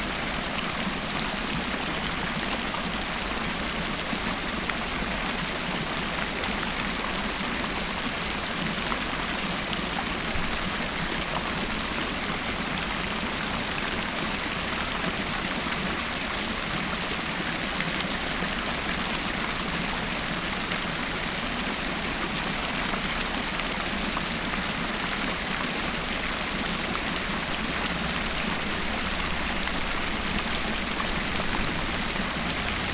dresden, hauptstr, small classical fountain
small classical fountain in the marketing zone
soundmap d: social ambiences/ listen to the people - in & outdoor nearfield recordings